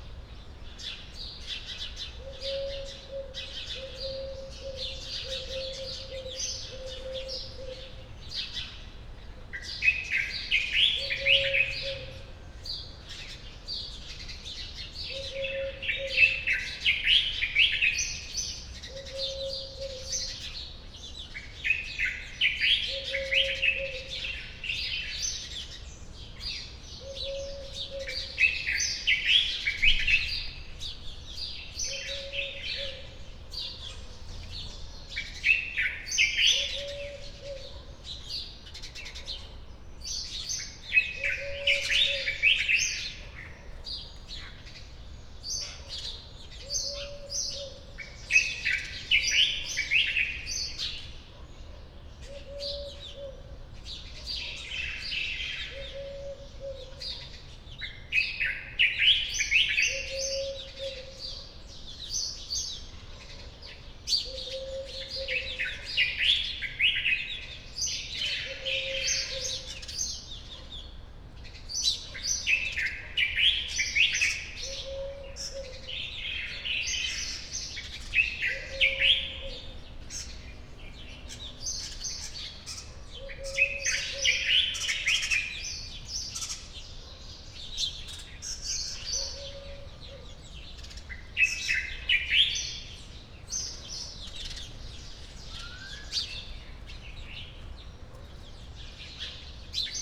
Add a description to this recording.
early birds at riad Denis Maisson, (Sony PCM D50, Primo EM172)